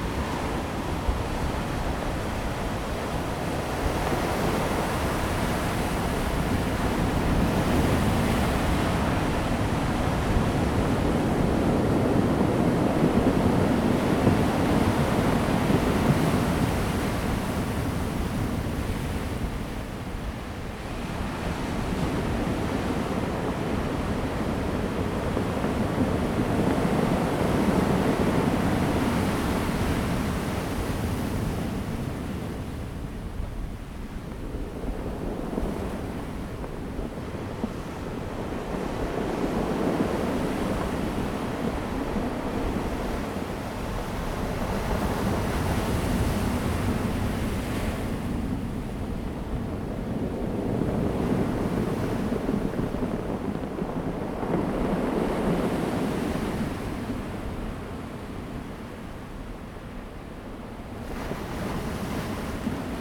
Sound of the waves, wind, Wave impact produces rolling stones
Zoom H2n MS+XY